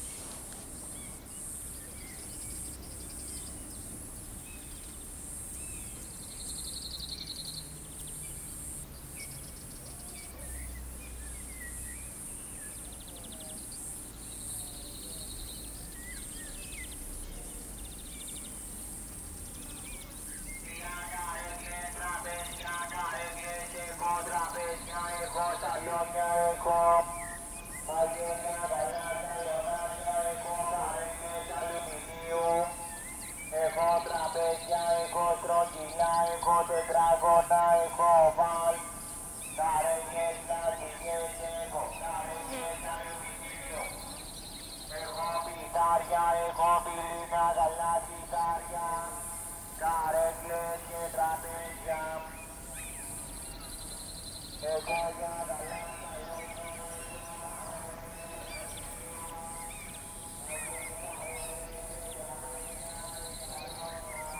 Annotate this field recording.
Ambience from Agora, Ancient Messene, with distant amplified voice echoing from the hills. Thanks to Tuned City